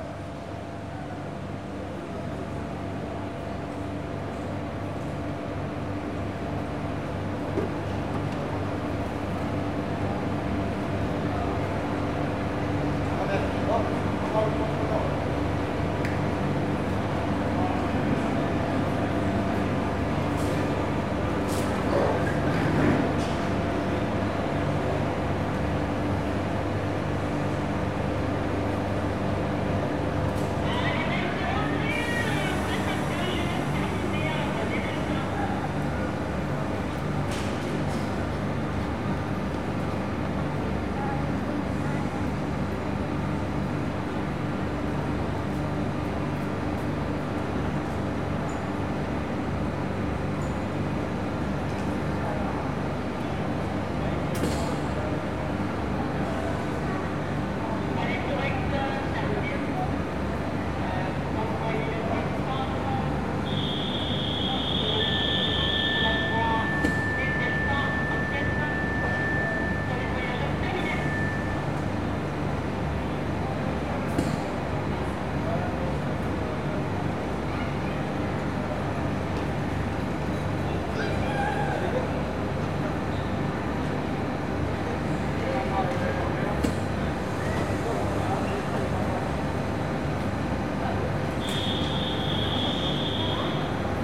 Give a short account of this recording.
train station Narbonne, Captation : Zoomh4n